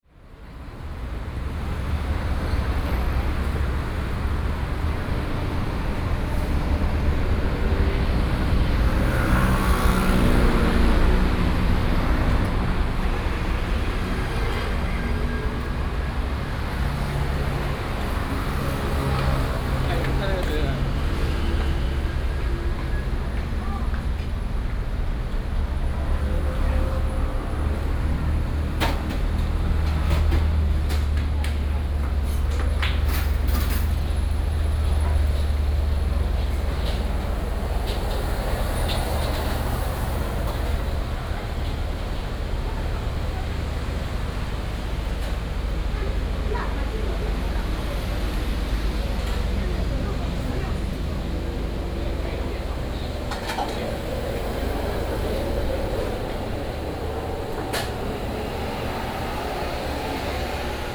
Da'an Rd., Da'an Dist., Taipei City - Walking along beside the Traditional Market
Traffic Sound, A variety of small restaurants, Walking along beside the Traditional Market